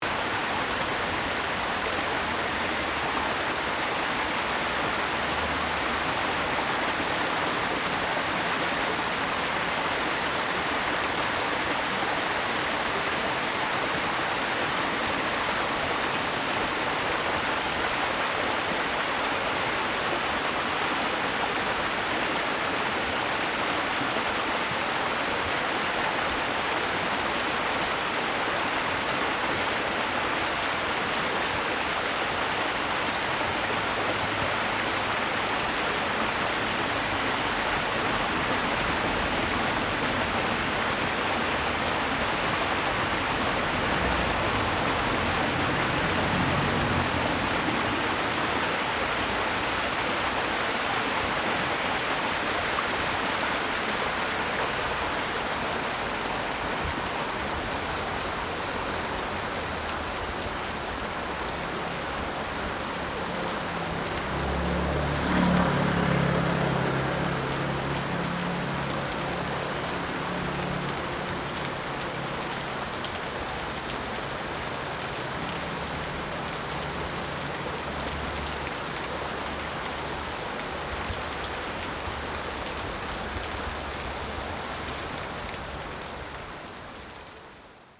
Shimen Rd., Tucheng Dist., New Taipei City - Stream
Stream, Traffic Sound, Water sound
Zoom H4n XY+Rode NT4